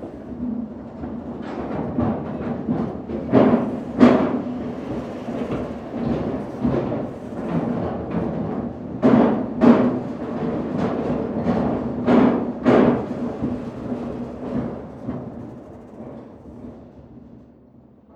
Most / Bridge 3 rec. Rafał Kołacki